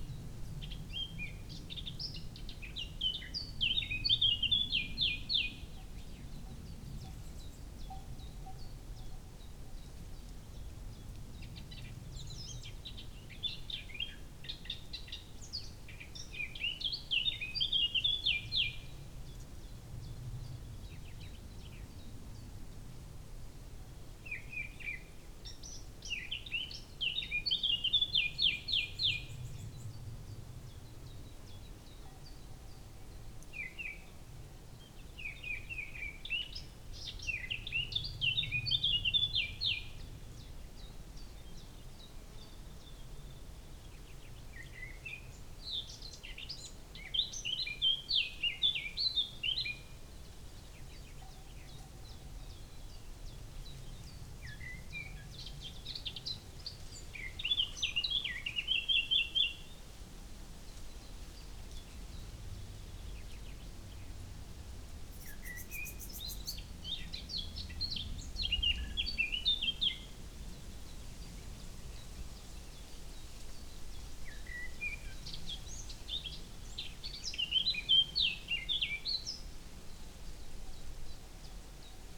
Čadrg, Tolmin, Slovenia - Near source of river Tolminka
Birds and cow bell in the distance.
Lom Uši Pro. MixPre II
Slovenija, 9 July 2022, 09:46